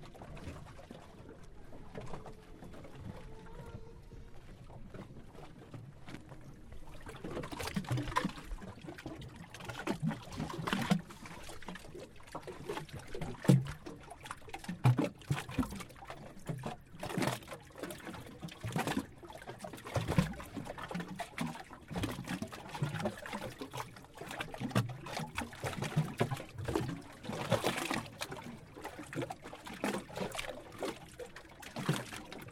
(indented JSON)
{
  "title": "Kuopio, Suomi, Matkustajasatama - The waves hit the bay of Kuopio (Sataman laitureihin iskeytyvät korkeat aallot)",
  "date": "2011-06-15 19:23:00",
  "description": "Recorder this moment in the middle of June, as the waves hit the Pier at the harbour of Kuopio\nZoom H4n in hand.",
  "latitude": "62.89",
  "longitude": "27.70",
  "altitude": "74",
  "timezone": "Europe/Helsinki"
}